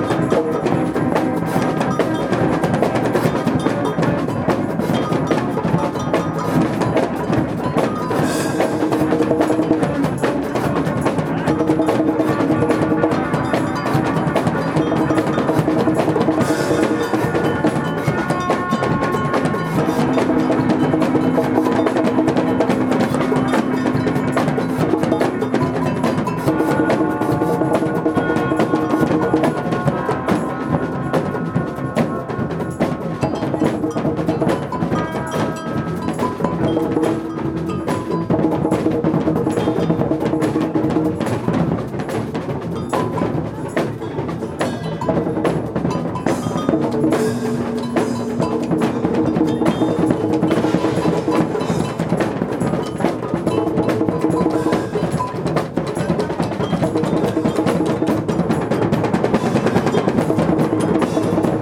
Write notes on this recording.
die letzte wunderbare wilde Session... letzte, allerletzte. wir sehen uns wieder!